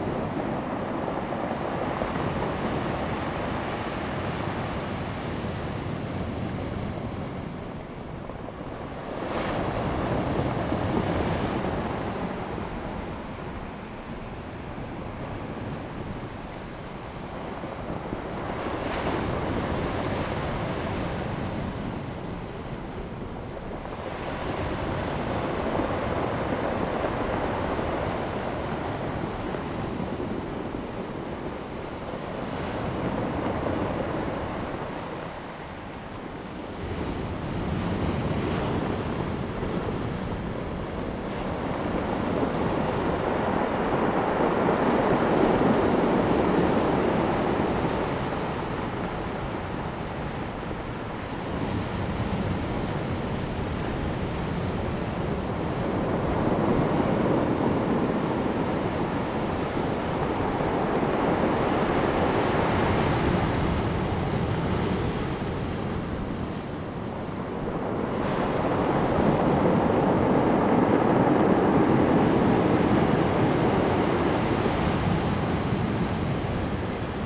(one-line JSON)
{"title": "達仁鄉南田海岸, Taitung County - Sound of the waves", "date": "2018-03-23 11:41:00", "description": "Close to the wave, Rolling stones\nZoom H2n MS+XY", "latitude": "22.25", "longitude": "120.89", "altitude": "4", "timezone": "Asia/Taipei"}